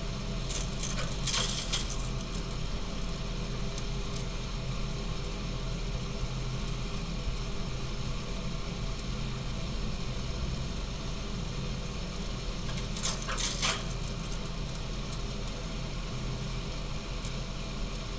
big water heater at the office, rumble in the water and gas pipes, zoom h4n